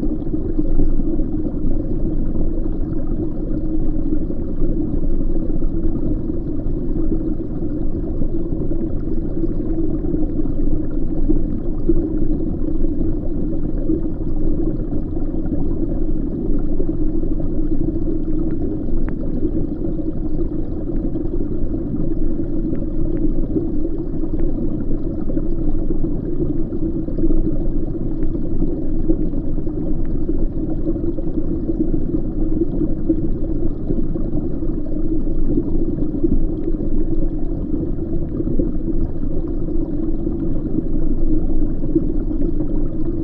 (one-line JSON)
{"title": "river Viesa, Lithuania, dark perspective", "date": "2020-11-08 16:15:00", "description": "Listening to the small river from the perspectives we do not hear naturally. Hydrophone under water and geophone contact on a branch fallen into water", "latitude": "55.44", "longitude": "25.57", "altitude": "129", "timezone": "Europe/Vilnius"}